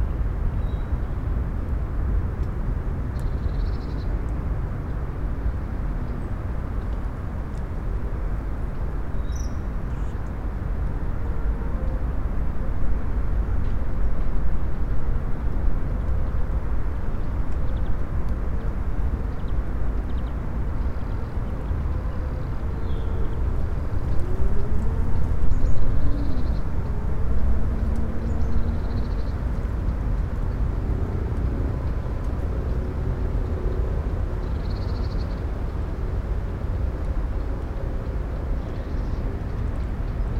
Chemin de Ceinture du Lac Inférieur, Paris, France - (362) Soundscape of Bois de Boulonge
Recording near the water - ducks, dogs, people running.
ORTF recording made with Sony D100
France métropolitaine, France